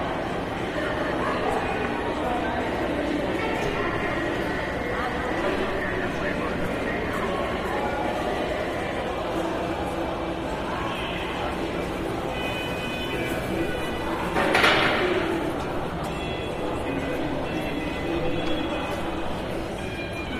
St. Matthews, Louisville, KY, USA - Consuming
Next to rides for children inside of a shopping mall. Shoppers passed by and children played nearby.
Recorded on a Zoom H4n.